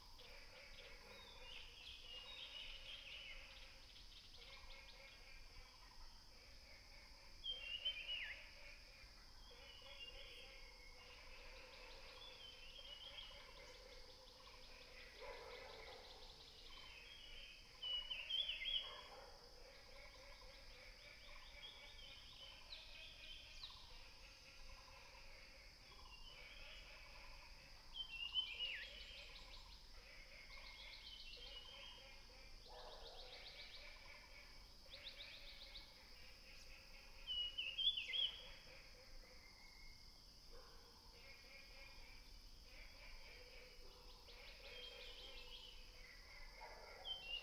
Birds singing, For wetlands, Frogs chirping